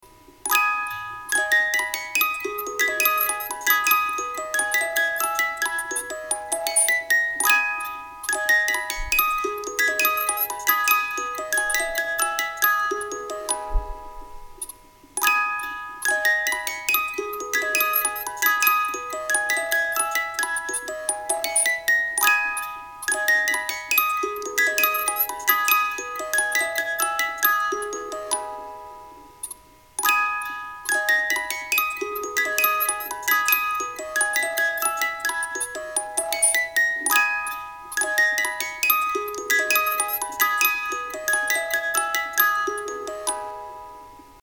Popocatepetl - Lolas Spieluhr
die geheimnisvolle zeitmanipulation der spieluhren